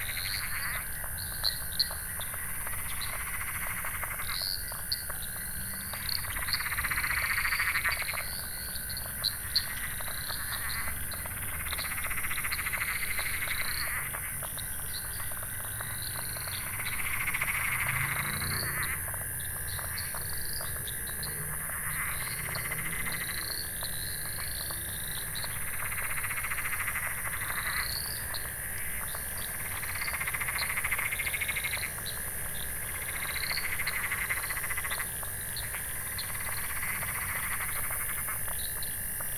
Newington Armory, Sydney, NSW, Australien - Frogs
Frogs in the evening in a small area of wetland at the entrance of the Newingtomn Armory